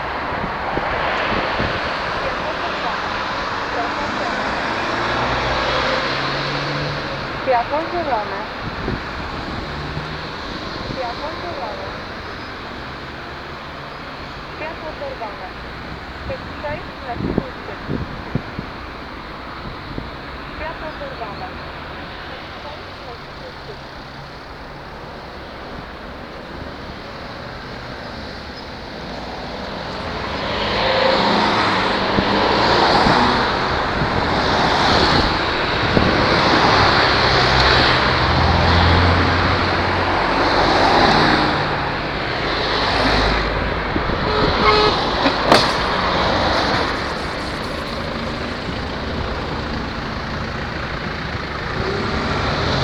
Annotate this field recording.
Passing cars and small car crash.